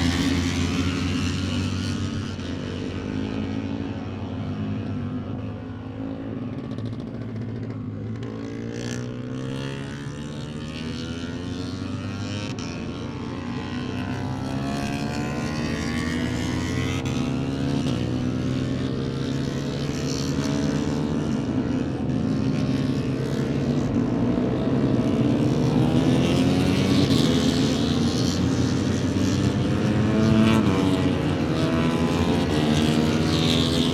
Silverstone Circuit, Towcester, UK - British Motorcycle Grand Prix 2018 ... moto three ...
British Motorcycle Grand Prix ... moto three ... free practice two ... lavalier mics clipped to a sandwich box ...
24 August 2018, 13:10